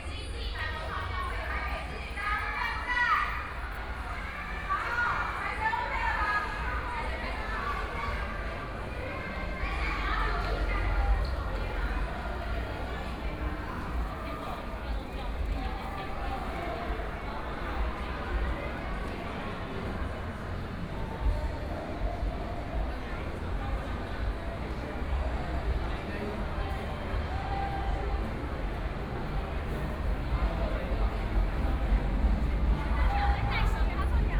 Taipei EXPO Park - First Full Moon Festival
First Full Moon Festival, Walking through the park, Many tourists, Aircraft flying through, Traffic Sound
Binaural recordings, Please turn up the volume a little
Zoom H4n+ Soundman OKM II
16 February 2014, ~21:00